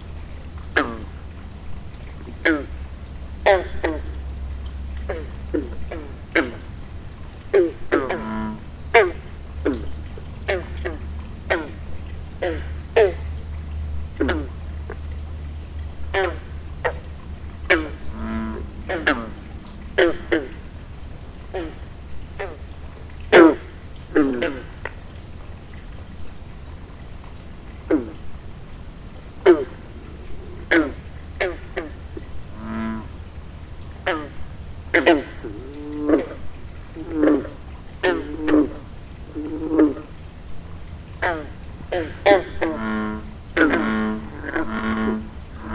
Cornwall, VT, USA - Frog pond
Late evening bullfrogs around a large landscaped pond.